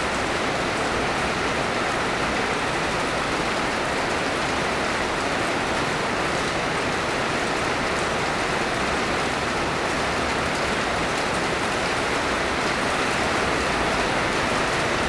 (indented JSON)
{
  "title": "Herserange, France - Rain symphony - II - Largo ma non troppo",
  "date": "2019-02-10 13:50:00",
  "description": "This is a one hour sound of the rain onto the gigantic roofs of an abandoned factory. This warehouse is the Herserange wire drawing plant, located in Lorraine, France. It has been in a state of abandonment for 20 years. In 1965, Longwy area was the lifeblood of 26,000 steelmakers. Today, absolutely everything is dead. Areas are devastated, gloomy and morbid.\nFortunately, I had the opportunity to make a poetic visit, since I had the rare and precious opportunity to record the rain in all its forms. The gigantic hangar offers a very large subject, with many roof waterproofing defects.\nI made two albums of this place : a one-hour continuity of rain sound (the concerto) and a one-hour compilation of various rain sounds (the symphony). Here is the sound of the symphony.\nII - Largo ma non troppo",
  "latitude": "49.52",
  "longitude": "5.81",
  "altitude": "274",
  "timezone": "GMT+1"
}